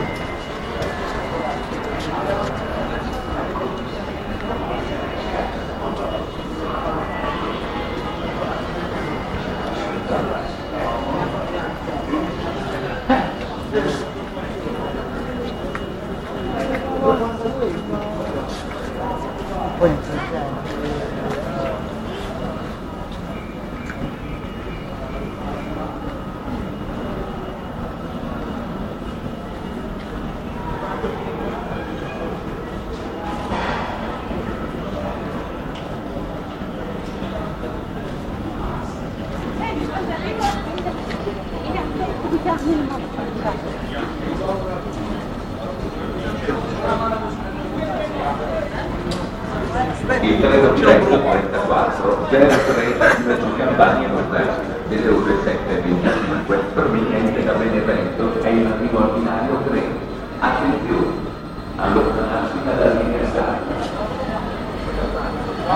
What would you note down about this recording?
Waiting for the train in Napoli, central railways station